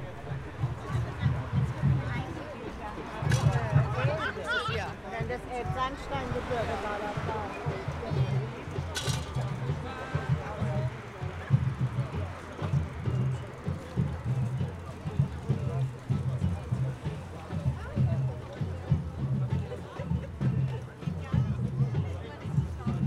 {"title": "wilhelmsburger reichsstrasse, 31.10.2009", "date": "2009-11-01 11:19:00", "description": "eine demonstration gegen den bau einer autobahn, der kirchdorf süd isolieren würde", "latitude": "53.49", "longitude": "10.00", "altitude": "2", "timezone": "Europe/Berlin"}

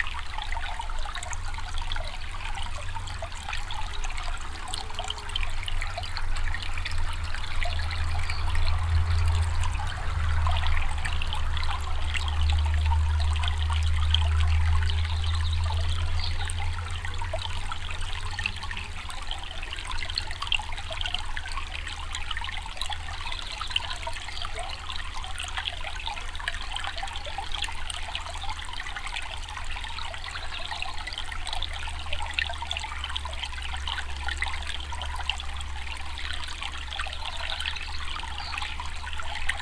{"title": "näideswald, forest, small stream", "date": "2011-07-12 14:27:00", "description": "In a small forest valley - a small stream. The sound of the bell like murmuring water under trees. In the distance passing traffic and more close up some flying insects.\nNäidserwald, Wald, kleiner Bach\nIn einem kleinen Waldtal ein kleiner Bach. Das Geräusch der Glocke wie murmelndes Wasser unter Bäumen. In der Ferne vorbeifahrender Verkehr und weiter vorne fliegende Insekten.\nNäidserwald, forêt, petit ruisseau\nUn petit ruisseau dans une petite vallée en forêt. Le son de l’eau murmurant sous les arbres ; on dirait des cloches. Dans le lointain, le trafic routier et, plus près, des insectes qui volent.\nProject - Klangraum Our - topographic field recordings, sound objects and social ambiences", "latitude": "50.02", "longitude": "6.05", "altitude": "382", "timezone": "Europe/Luxembourg"}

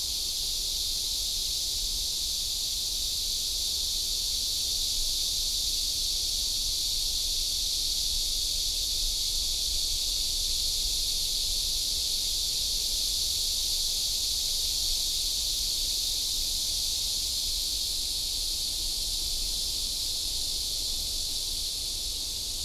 {"title": "永光里興安宮, Zhongli Dist. - Cicada cry", "date": "2017-07-28 07:16:00", "description": "Next to the temple, Cicada cry, traffic sound, The sound of the distant highway", "latitude": "24.97", "longitude": "121.22", "altitude": "126", "timezone": "Asia/Taipei"}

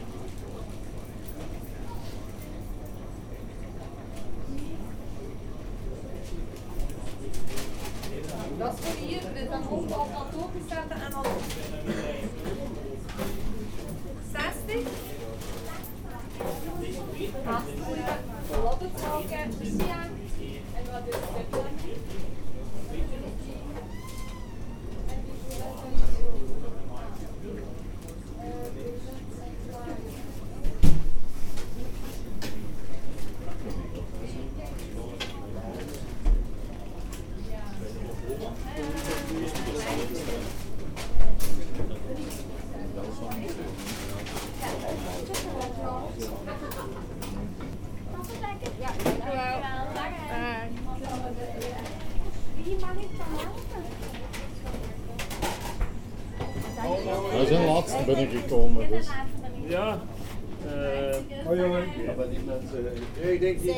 {"title": "Riemst, Belgium - Geronimo frituur chip shop", "date": "2018-01-27 19:05:00", "description": "In the Vroenhoven frituur, a chip shop called Geronimo. It's very very small and very very busy ! From 0:00 to 2:00 mn, people are ordering. It's so quiet, you can't imagine it's crowded ! After 2:20 mn, people are eating and it's more animated. It's an handwork chip shop and it's a good place, where local people massively go.", "latitude": "50.82", "longitude": "5.63", "altitude": "87", "timezone": "Europe/Brussels"}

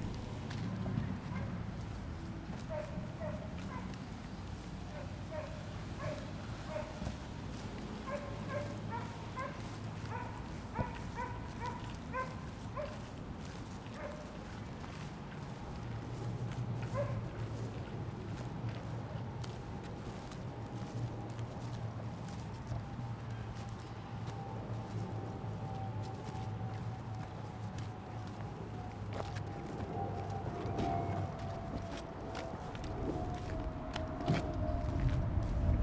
{"title": "Woodland Park, Seattle WA", "date": "2010-07-18 16:00:00", "description": "Part one of soundwalk in Woodland Park for World Listening Day in Seattle Washington.", "latitude": "47.67", "longitude": "-122.35", "altitude": "79", "timezone": "America/Los_Angeles"}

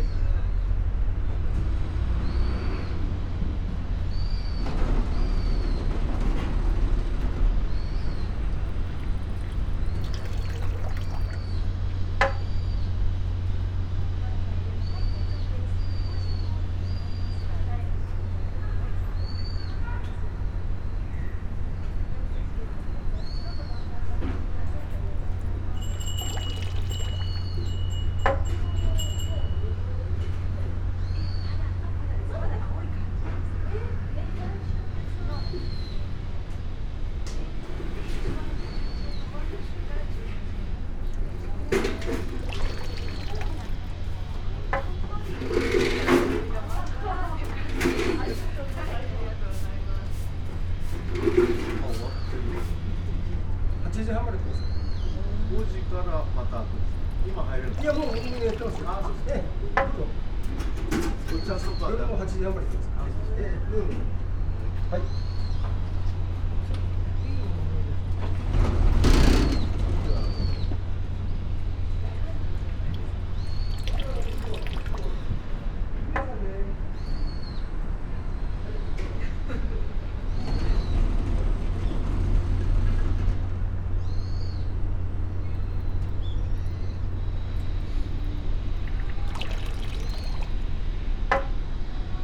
{"title": "koishikawa korakuen gardens, tokyo - shishi-odoshi", "date": "2013-11-13 16:14:00", "description": "bamboo tube, water flow, still water", "latitude": "35.71", "longitude": "139.75", "altitude": "21", "timezone": "Asia/Tokyo"}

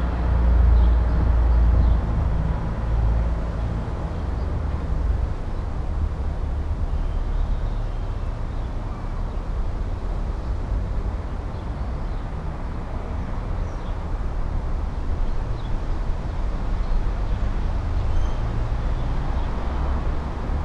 {"title": "Florac, Rue du Rempart, the bells.", "date": "2011-07-14 19:08:00", "description": "Florac, Rue du Rempart, the bells\nFanfare in the background for the 14th of July", "latitude": "44.32", "longitude": "3.59", "altitude": "556", "timezone": "Europe/Paris"}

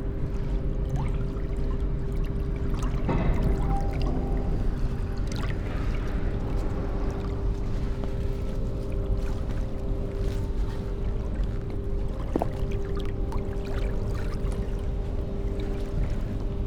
{"title": "Plänterwald, Berlin, Germany - frog, november", "date": "2015-11-08 16:07:00", "description": "river Spree with free overflowing waves, pale green frog jumps in front of my eyes, flops itself into square hole in concrete surface, after few moments she is out again, sitting, focusing on descended colorful microphone bubbles, after that she's gone ... crows, cement factory", "latitude": "52.49", "longitude": "13.49", "altitude": "33", "timezone": "Europe/Berlin"}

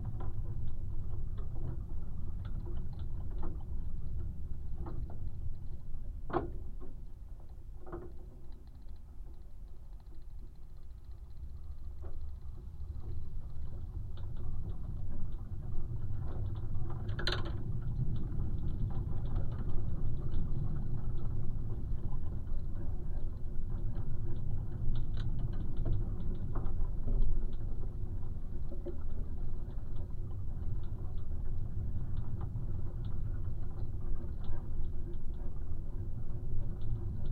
{"title": "Utena, Lithuania, sounding fence", "date": "2021-02-02 16:20:00", "description": "winter, wind, fence, contact microphones, geophone", "latitude": "55.51", "longitude": "25.60", "altitude": "100", "timezone": "Europe/Vilnius"}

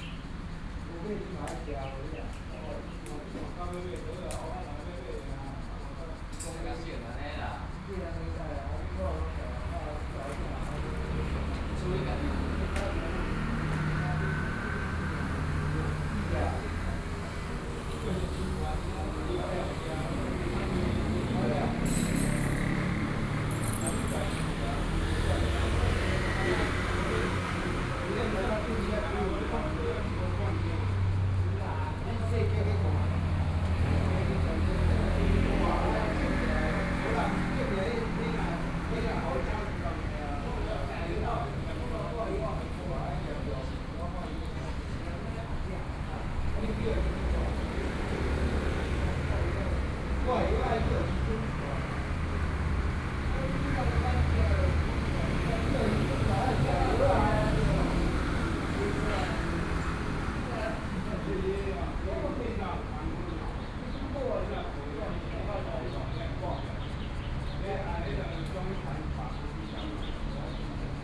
{"title": "Taoyuan, Taiwan - Intersection", "date": "2013-09-11 10:24:00", "description": "Group of elderly people in the temple Chat, Traffic Noise, Sony PCM D50 + Soundman OKM II", "latitude": "25.00", "longitude": "121.33", "altitude": "103", "timezone": "Asia/Taipei"}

